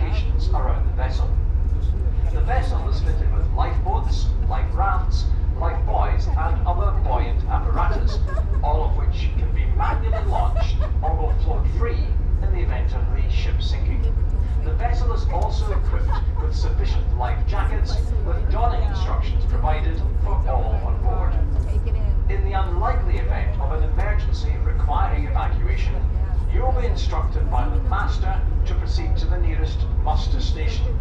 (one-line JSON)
{"title": "Oban, UK - Ferry from Oban to Craignure", "date": "2016-09-19 11:01:00", "description": "Safety announcements in English and Gaelic on the ferry from Oban to Craignure (Mull), with some background chatter. Recorded on a Sony PCM-M10.", "latitude": "56.41", "longitude": "-5.48", "altitude": "5", "timezone": "Europe/London"}